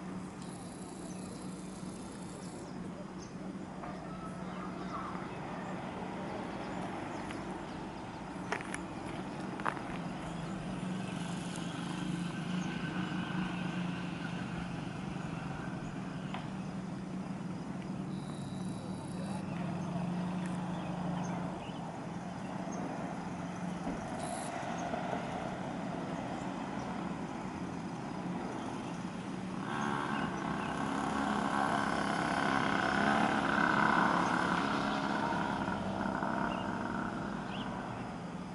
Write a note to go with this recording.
"en ces lieux qui évoquent la vie protégée, loin du bruit et la fureur du monde moderne", voici donc ce qu'on entend (et ce qu'on voit) du Chemin Lisière de la forêt. Je teste l'appareil photo Sony DSC-HX60V en quête de trouver une "caméscope de dépannage" (je rêve de mieux mais c'est cher et lourd). Ce qu'on peut faire est cadrer, zoomer, faire des traveling plus ou moins et éviter de trop bouger. Le son est très bien rendu, l'image est exploitable mais il faut se contenter d'un réglage unique (pas de correction d'exposition ou de réglage personnel, faible dynamique pour les nuages). À la fin une séquence tournée au smartphone (galaxy s8), c'est différent mais pas meilleur et même décevant (surtout le son!!!) (pourtant le smartphone exploite un débit vidéo beaucoup plus lourd et une qualité de couleur meilleure pour les plantes vu de près). Pour montrer des aspects sonores et visuels de CILAOS, le petit appareil photo convient bien mieux.